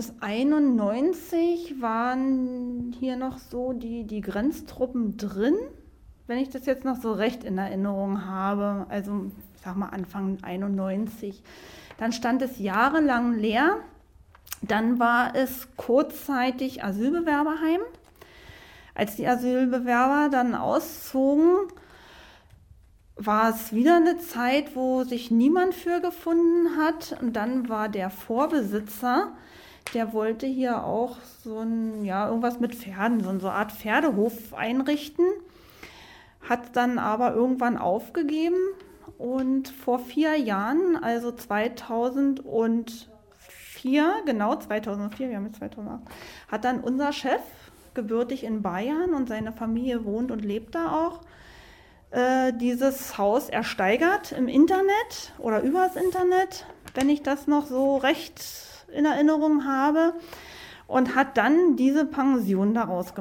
ziemendorf - im pferdeparadies
Produktion: Deutschlandradio Kultur/Norddeutscher Rundfunk 2009
Ziemendorf, Germany